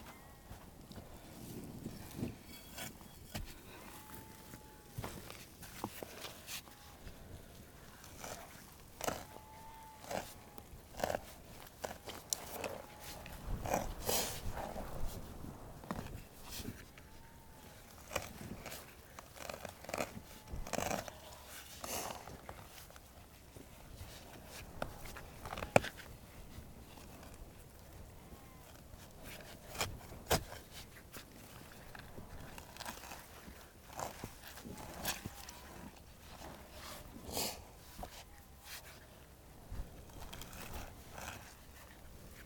Laurie's peat bank, between Blackton and Hestinsetter, Shetland Islands, UK - Ingrid cutting peat from Laurie's peat bank
All over Shetland people still have peat cutting rights. If you look at the satellite image of this landscape, you can see dark lines running off the track; these are strips of land which have been cut back to reveal the young coal beneath. This is annually harvested in small quantities and used as a domestic fuel to heat the home throughout winter. There are many historic images of Shetland women walking with large keshies on their backs, filled with cut peats, and knitting as they walk; I was interested in listening to the labour associated with the peat harvest, and Laurie's mother, Ingrid, kindly agreed to cut some peat for me so I could hear how this work sounds. This is the wrong time of year to cut peat, as the ground is dry. Normally the work is done in May, when the winter rains have wet the earth through, and when the birds are very much noisier than they are here in this recording!
1 August 2013, ~15:00